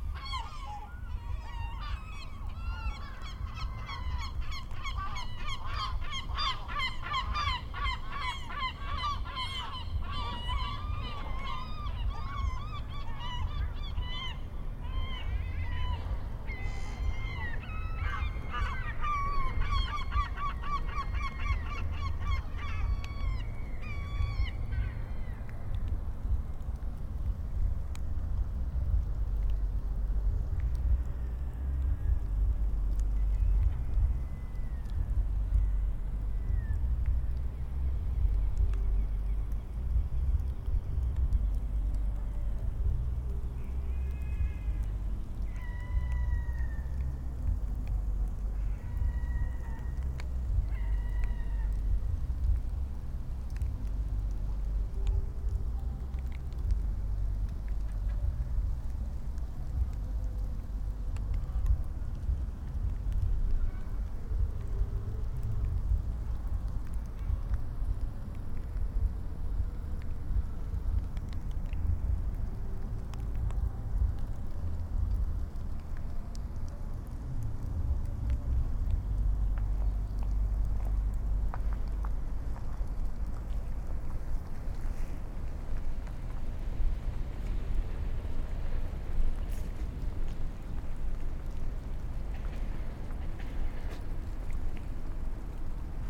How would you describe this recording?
Gulls, ambience, distant music